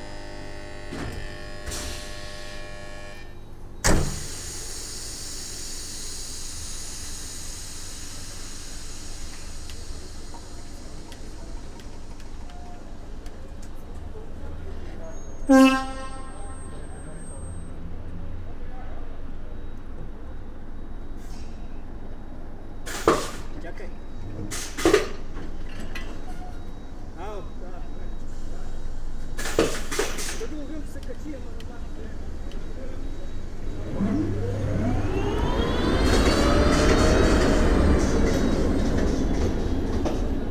Halmanli, bulgaria - train stop
2 x dpa 6060.
3 July 2022, 22:33